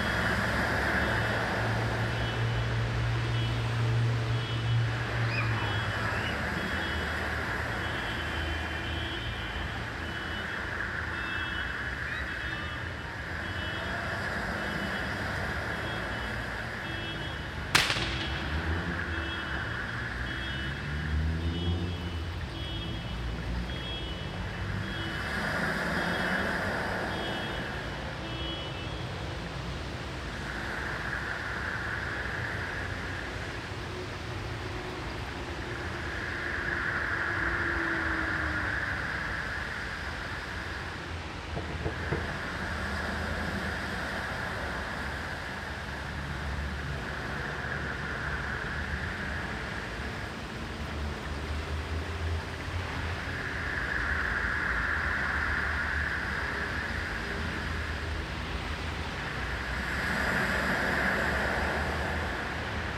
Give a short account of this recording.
Nauener Platz in Berlin was recently remodeled and reconstructed by urban planners and acousticians in order to improve its ambiance – with special regard to its sonic properties. One of the outcomes of this project are several “ear benches” with integrated speakers to listen to ocean surf or birdsong.